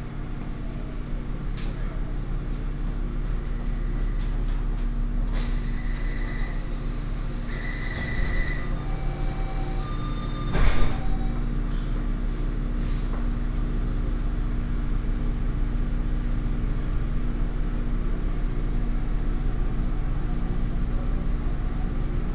{
  "title": "Xindian Line (Taipei Metro), New Taipei City - Xindian Line",
  "date": "2012-06-28 14:59:00",
  "description": "from Dapinglin to Xindian District Office, Zoom H4n+ Soundman OKM II",
  "latitude": "24.98",
  "longitude": "121.54",
  "altitude": "27",
  "timezone": "Asia/Taipei"
}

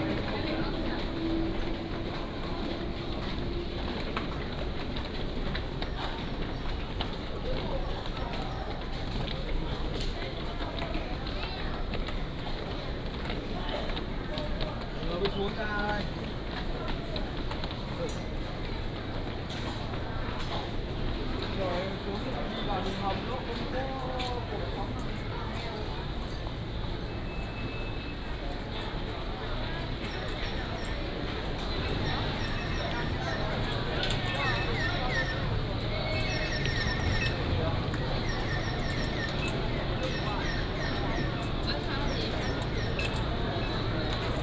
{
  "title": "Tainan Station, Taiwan - To the station exit",
  "date": "2017-01-31 12:52:00",
  "description": "From the station platform, Through the underground road, To export.",
  "latitude": "23.00",
  "longitude": "120.21",
  "altitude": "22",
  "timezone": "GMT+1"
}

